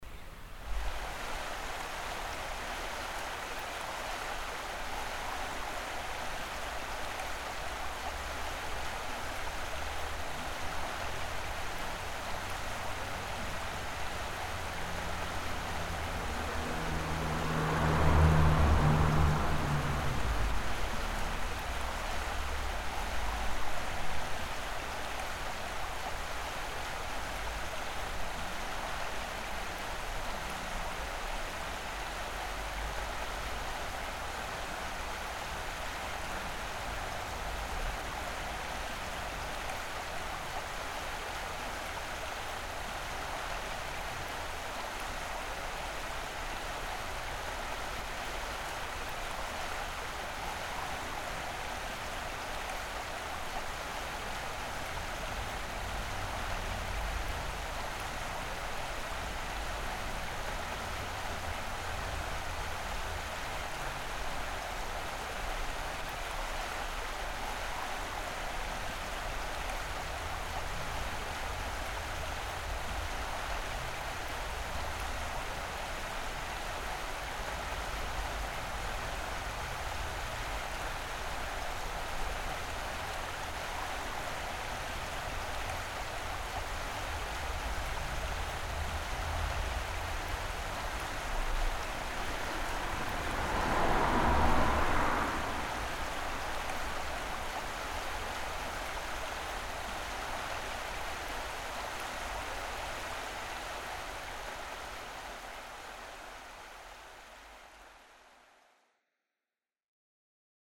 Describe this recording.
At the small mill stream nearby a road bridge. The sound of the constant flowing water while some cars cross the bridge. Enscherange, kleiner Bach bei der Brücke, An dem kleinen Mühlenbach neben der Straßenbrücke. Das Geräusch von ständig fließendem Wasser während einige Autos die Brücke überqueren. Enscherange, petit ruisseau à la hauteur d'un pont, Sur le petit ruisseau du moulin à la hauteur d’un pont routier. Le bruit de l’eau qui s’écoule de manière continue pendant que quelques voitures franchissent le pont.